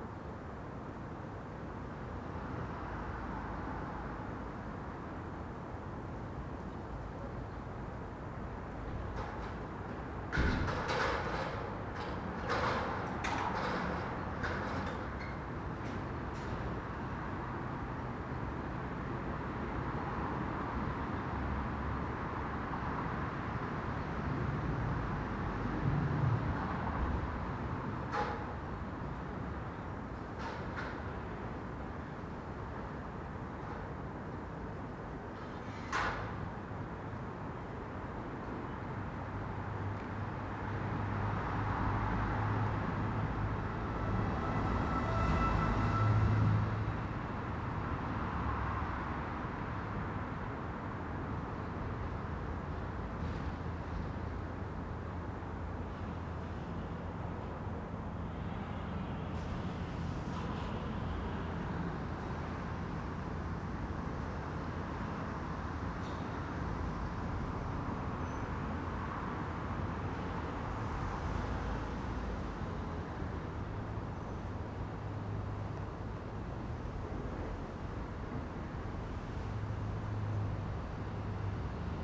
Contención Island Day 14 inner north - Walking to the sounds of Contención Island Day 14 Monday January 18th
High Street St Nicholas Avenue
People come to the machine
to pay for parking
Roofers unload ladders
and climb onto the roof
one appears above the roofline
standing on the flat roof of a loft extension
Two runners go/come
from the terraced houses behind me
A flock of racing pigeons flies overhead
North East England, England, United Kingdom, 18 January 2021